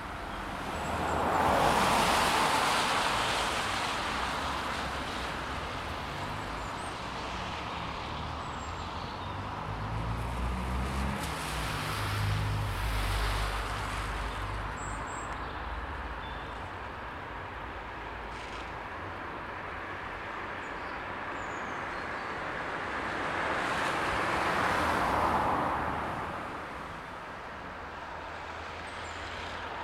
Thomas Lewis Way, Southampton, UK - 034 Traffic, Trains, Birdsong, Rain
3 February 2017